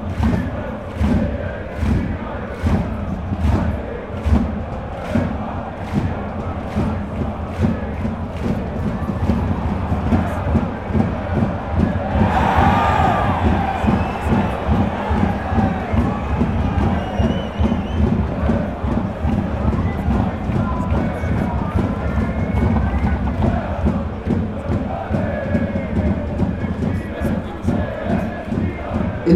2. Fußball Bundesliga, FC St. Pauli against Werder Bremen, near the guest fan block